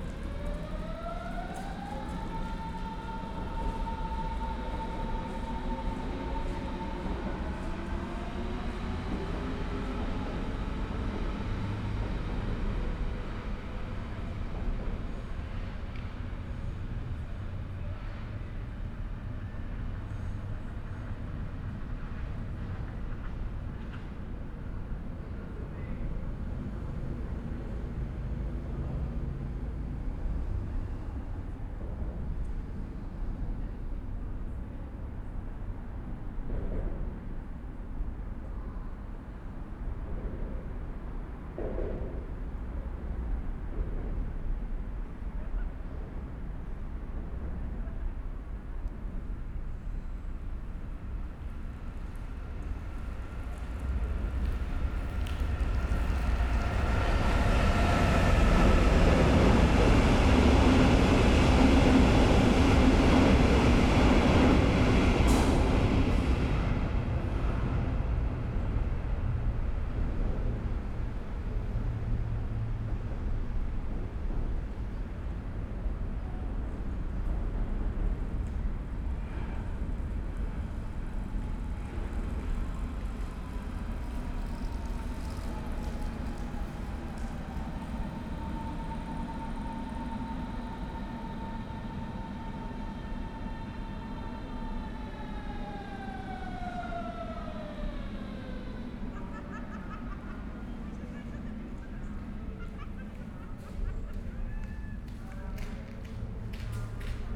Bösebrücke, Bornholmer Str., Berlin, Deutschland - under bridge, ambience
Bornholmer Str., Berlin, ambience under bridge, trains, bikes, pedestrians
(Tascam DR100MK3, DPA4060)
24 August 2022, ~21:00